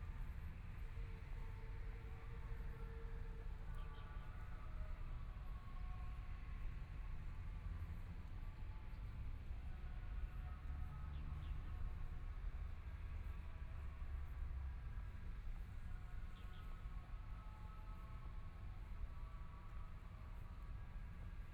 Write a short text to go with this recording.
walking, Aircraft flying through, Environmental sounds, birds sound, Binaural recordings, Zoom H4n+ Soundman OKM II + Rode NT4